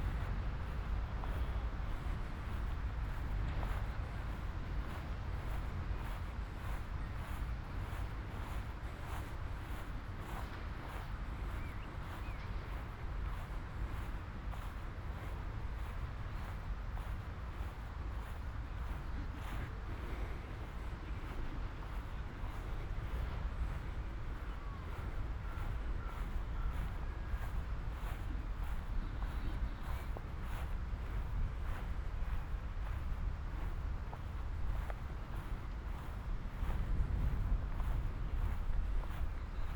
Ascolto il tuo cuore, città. I listen to your heart, city. Chapter LXVIII - Walk in reopened Valentino park in the time of COVID19: soundwalk
"Walk in reopened Valentino park in the time of COVID19": soundwalk
Chapter LXVIII of Ascolto il tuo cuore, città. I listen to your heart, city
Wednesday May 6th 2020. San Salvario district Turin, to reopened Valentino park and back, fifty seven days (but thid day of Phase 2) of emergency disposition due to the epidemic of COVID19
Start at 4:39 p.m. end at 5:36 p.m. duration of recording 56’’40”
The entire path is associated with a synchronized GPS track recorded in the (kmz, kml, gpx) files downloadable here:
6 May 2020, 16:39